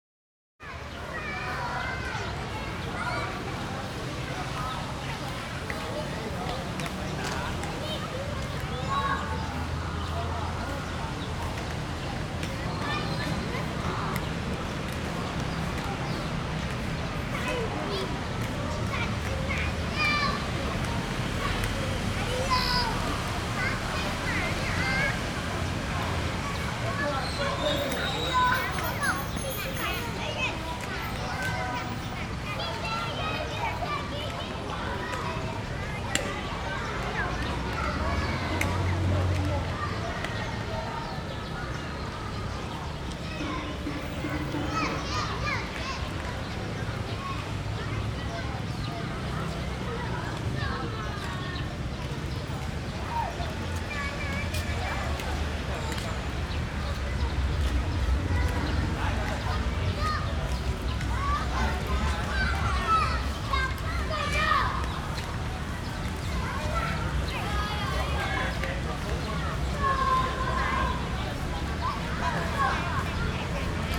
忠義廟, Luzhou Dist., New Taipei City - Children and birds singing
In the square in front of the temple, Children and birds singing
Rode NT4+Zoom H4n
New Taipei City, Taiwan